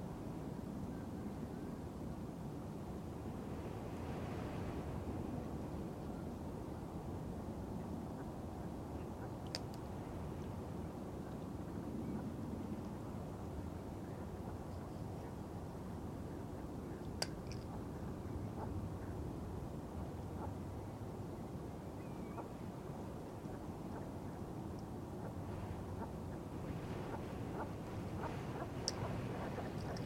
This recording was made in Brancaster Staithe, Norfolk, UK. It is a small town nearby to Kings Lynn, the conditions were very windy, but there were wading birds and geese etc in the area. In this recording you can hear a seagull picking up and dropping a mussel from height, to try and crack open its shell. Recorded on Mixpre6/USI Pro in a rycote windshield. Apologies for the small amount of wind-noise in the recording, I had full windshield and dead cat covering on the microphones.
There is also the distance sound of ship masts rattling in the wind.
Kings Lynn, UK, 2 February 2019